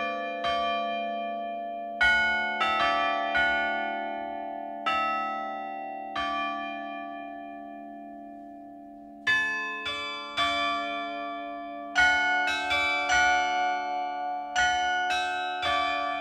Beffroi de Bergues - Département du Nord
Maître carillonneur : Mr Jacques Martel
13 June, 11:15